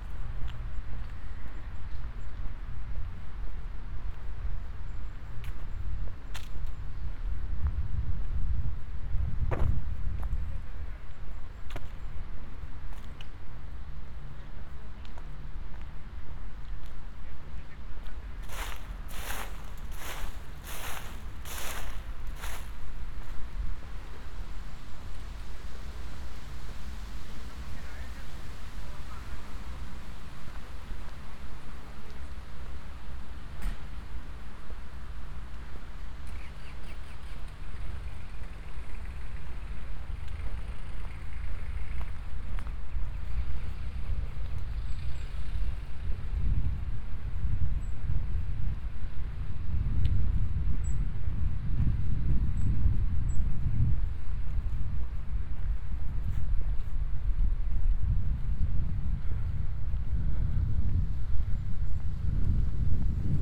Cuenca, Cuenca, España - #SoundwalkingCuenca 2015-11-27 Soundwalk crossing the Júcar River, Cuenca, Spain
A soundwalk through a park, crossing the Júcar river in Cuenca, Spain.
Luhd binaural microphones -> Sony PCM-D100